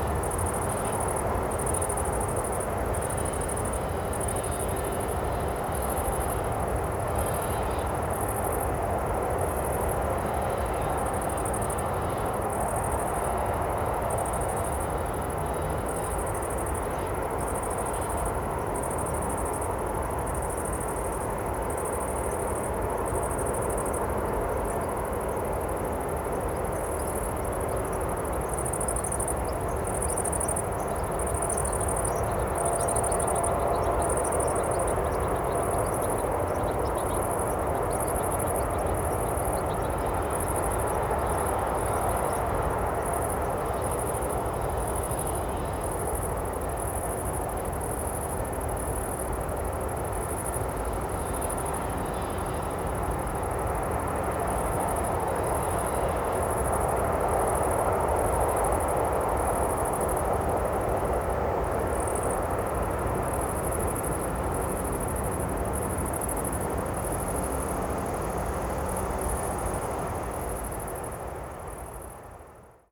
Horní Jiřetín, Czech Republic - Distant mine with grasshoppers
Overlooking the huge Zámek Jezeří brown coal (lignite mine). The sound of a desolated landscape on a warm summer day.